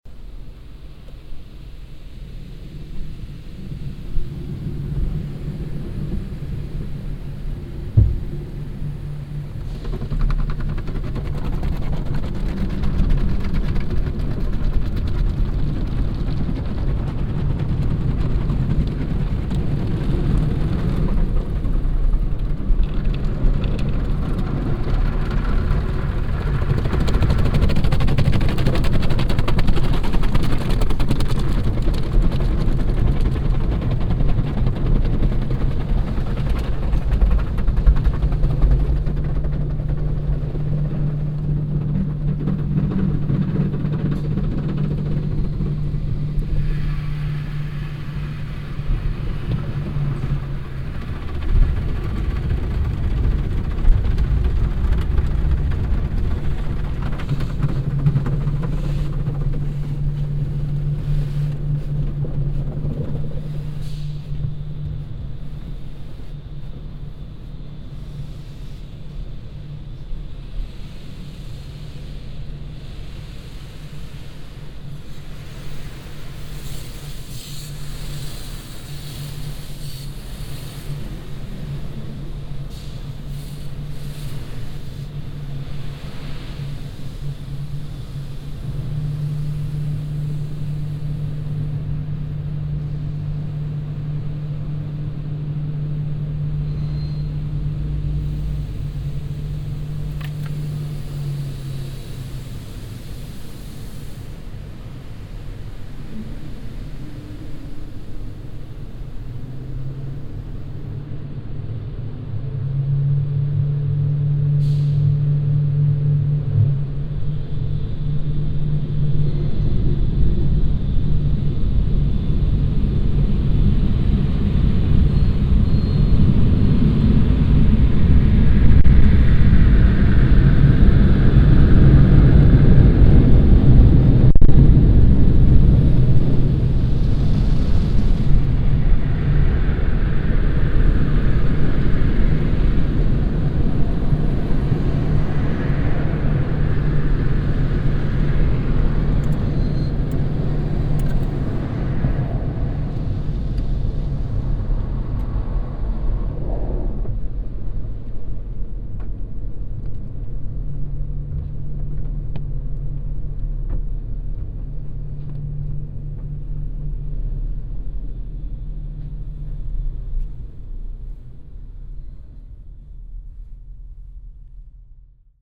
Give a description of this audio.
fahrt mit pkw durch autowaschanlage, soundmap nrw: social ambiences/ listen to the people - in & outdoor nearfield recordings